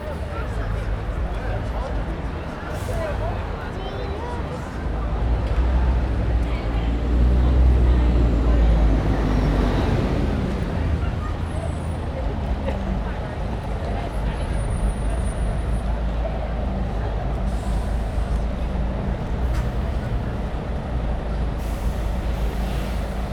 neoscenes: in front of Baptist Church